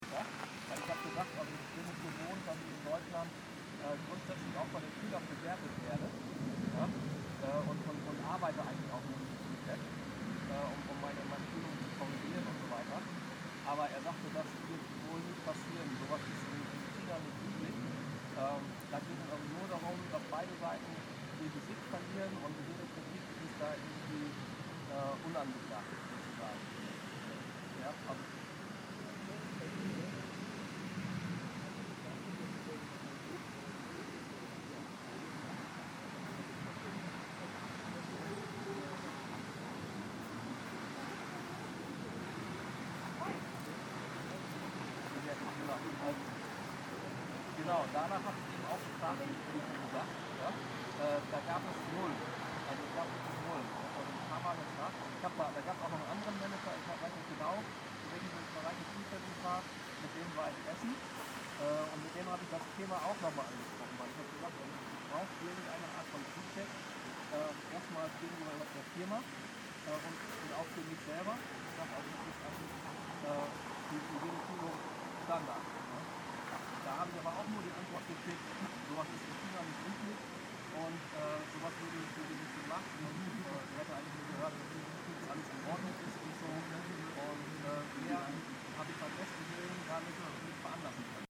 Wiesbaden, Kurpark, Gespräch
fontäne, Umgebungsgeräusche und Telefonierer ...
Wiesbaden, Germany